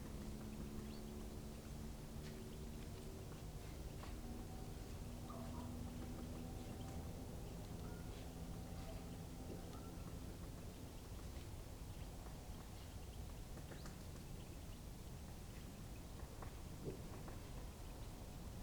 bad freienwalde/oder: freienwalder landgraben - the city, the country & me: woodpecker
a woodpecker, birds, barking dogs, bangers and a train in the distance
the city, the country & me: december 31, 2015